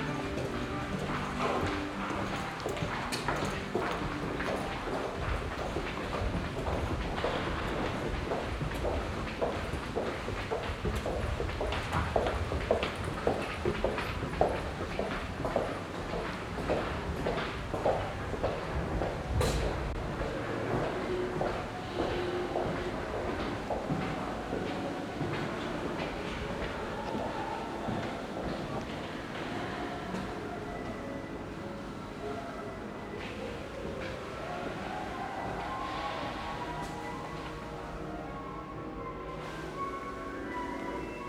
Werden, Essen, Deutschland - essen, folkwang university of arts
Im historischen Gebäude der Folkwang Universtät der Künste Abteilung Musik.. Der Klang von Schritten, Stufen und Türen und die Klänge aus den Übungsräumen der Musikstudenten.
Inside the historical building of the folkwang university of arts at the music department. The sounds of steps and the music performed by the students.
Projekt - Stadtklang//: Hörorte - topographic field recordings and social ambiences
Essen, Germany, April 2014